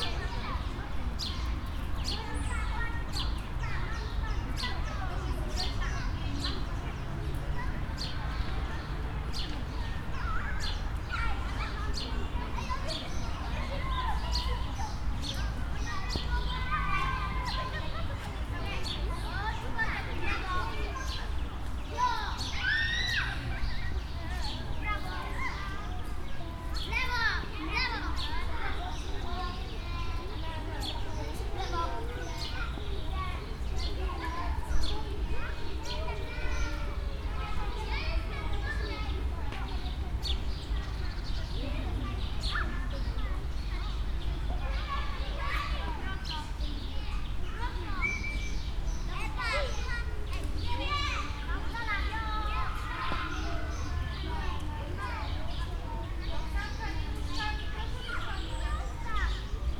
Poznan, Lazarz district, Wilsons Park, kids playing
kids playing and singing on a patch of grass